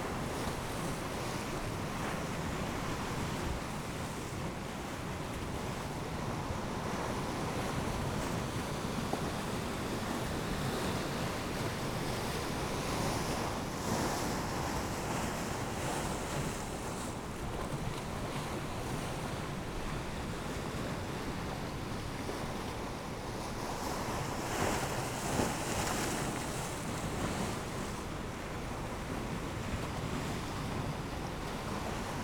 East Lighthouse, Battery Parade, Whitby, UK - east pier falling tide
east pier falling tide ... dpa 4060s clipped to bag to zoom h5 ...
Yorkshire and the Humber, England, United Kingdom, 27 May, 10:50